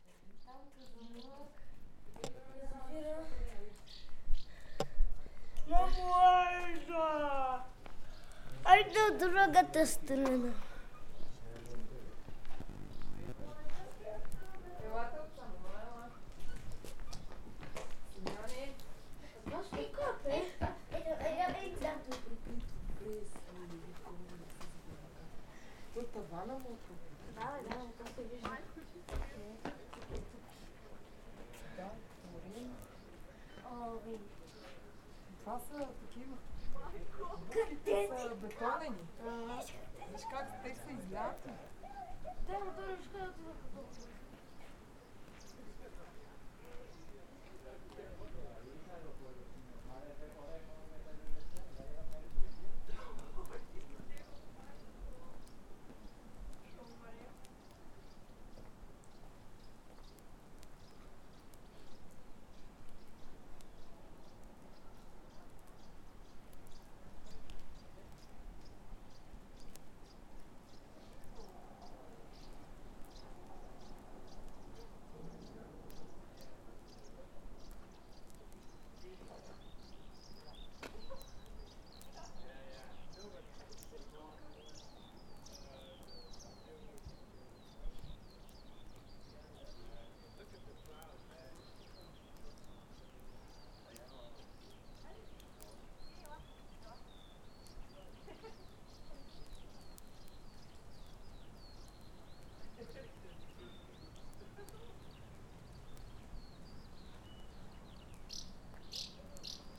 Buzludzha, Bulgaria, Drone
In front of the ruin of Buzludzha there are visitors who talk, birds chirping, but after a while a strange sound becomes audible, like a swarm of bees, but in fact it is a drone from two people from Switzerland (as I got to know later) that I could not see